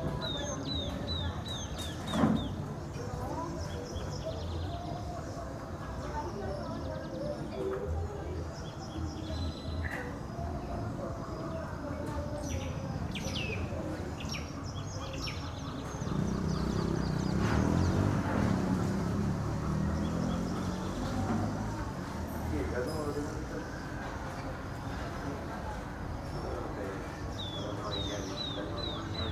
Same place, different day and hour.
San Jacinto de Buena Fe, Ecuador